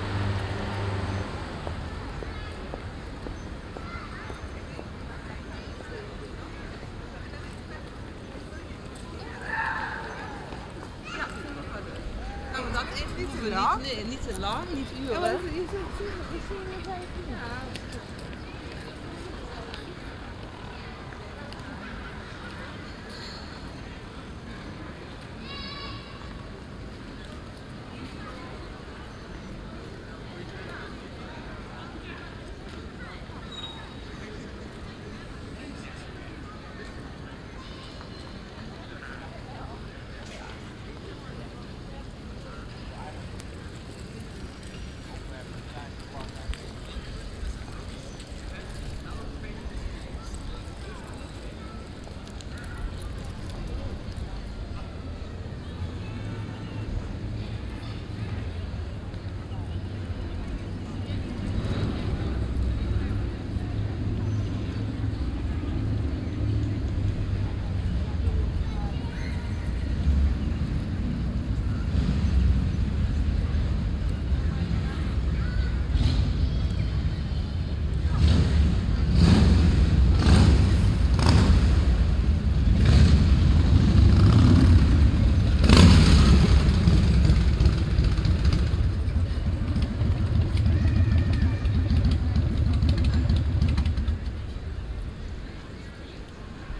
A sunny Saturday in October; People on café terraces, kids playing and one loud motorcycle.
Binaural recording.